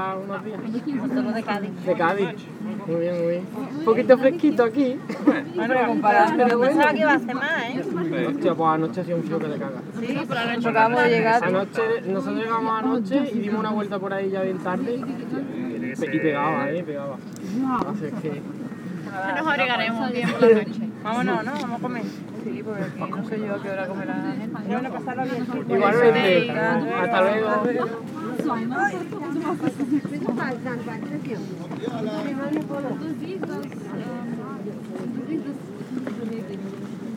København, Denmark - The small mermaid
Posed on a rock, the little mairmaid is the symbol of the Copenhagen city. A lot of tourists are trying to make a selfie, while jostling themself unceremoniously. This is the daily nowadays tourism. A friend said me that Den Lille Havfrue (the name in Danish) is a tourist trap, but he said more : it's a black hole ! It was true.
15 April, 14:00